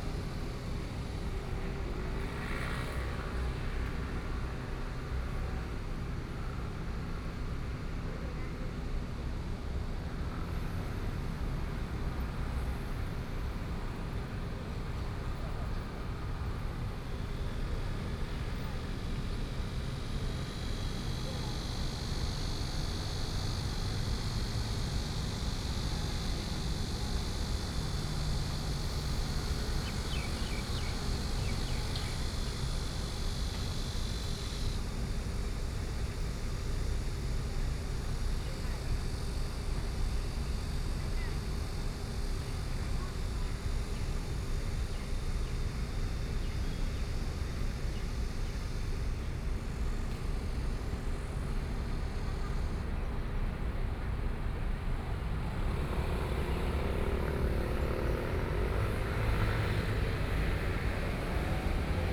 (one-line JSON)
{"title": "Sec., Yixing Rd., Yilan City - In the Square", "date": "2014-07-05 08:38:00", "description": "In the Square, Cicadas, Traffic Sound, Hot weather\nSony PCM D50+ Soundman OKM II", "latitude": "24.75", "longitude": "121.76", "altitude": "11", "timezone": "Asia/Taipei"}